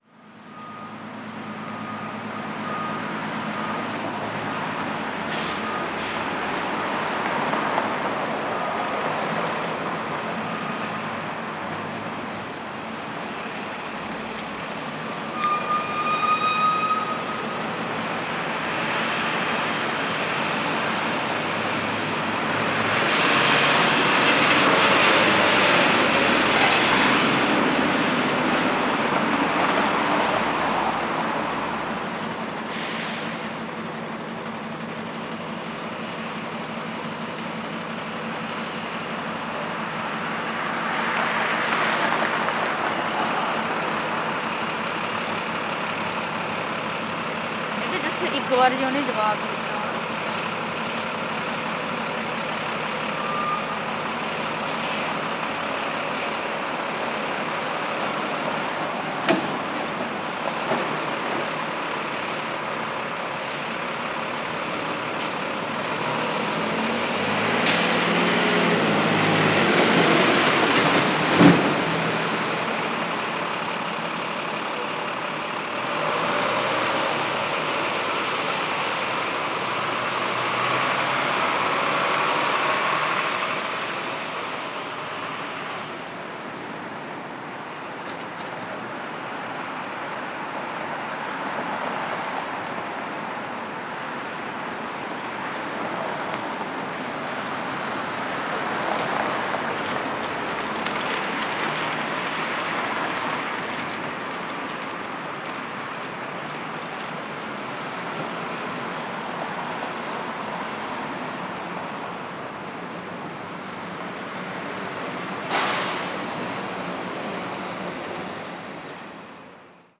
Rue Sainte-Catherine O, Montréal, QC, Canada - Guy Street
Recording at the corner of Guy St and Saint-Catherine St. There was a minimal number of pedestrians walking the area, instead, it was a space blanketed by the noises generated by passing vehicles and the morning frigid air passing by the buildings.
Québec, Canada, 17 December 2020